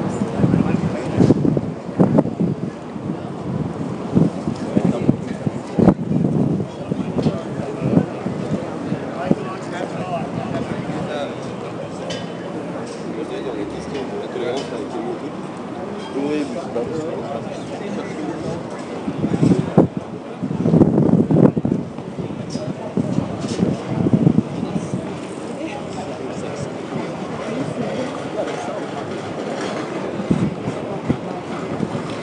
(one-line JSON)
{"title": "Castro, San Francisco, CA, USA - Sunday afternoon @ Castro and 16th.", "date": "2012-09-30 16:26:00", "description": "At the corner of Castro and 16th is a colorful hangout. Outside seating for Twin Peaks bar, and nudists congregate to socialize in the flamboyant Castro neighborhood.", "latitude": "37.76", "longitude": "-122.44", "altitude": "48", "timezone": "America/Los_Angeles"}